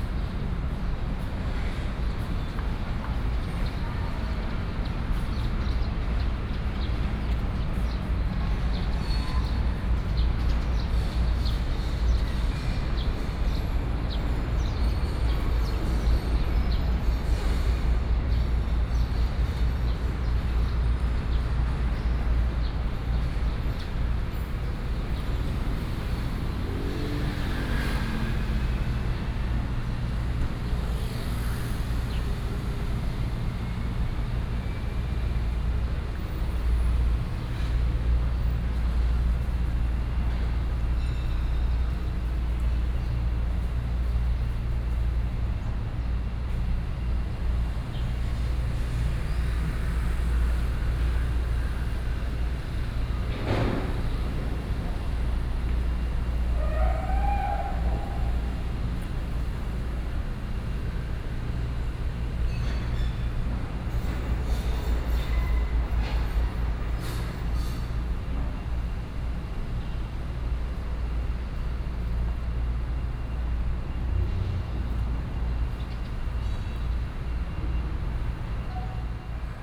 瑠公圳公園, Taipei City - Walking through the park
Walking through the park, Hot weather, Bird calls, Construction noise